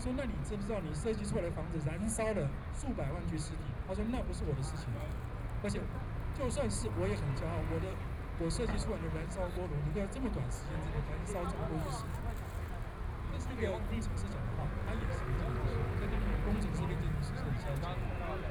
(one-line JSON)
{"title": "中正區幸福里, Taipei City - Street Forum", "date": "2014-03-21 21:47:00", "description": "Walking through the site in protest, Traffic Sound, People and students occupied the Legislature, A group of students and university professors sitting in the park solidarity with the student protest movement\nBinaural recordings", "latitude": "25.04", "longitude": "121.52", "altitude": "13", "timezone": "Asia/Taipei"}